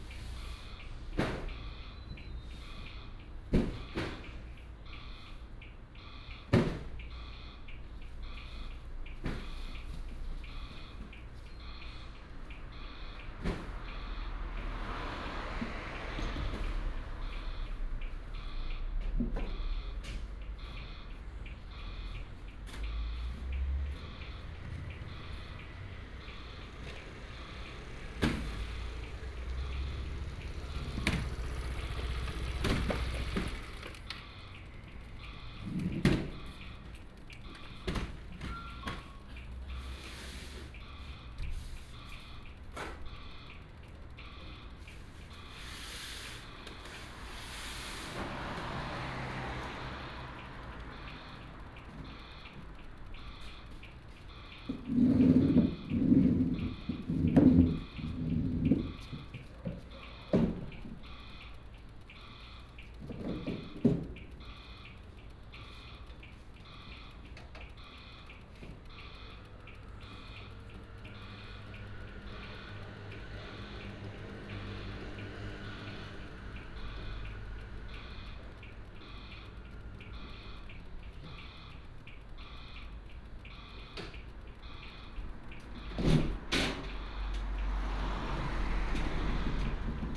維軒門市, Fangliao Township - Truck unloading
Night outside the convenience store, Late night street, Traffic sound, Truck unloading, Game Machine Noise, Dog
Binaural recordings, Sony PCM D100+ Soundman OKM II